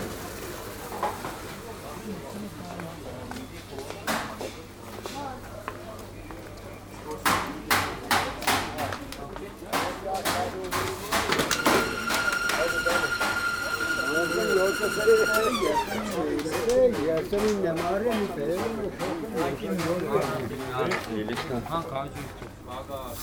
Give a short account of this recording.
The daily Gyumri market, where you can find absolutely everything you want. A long walk between the between vendors' stalls.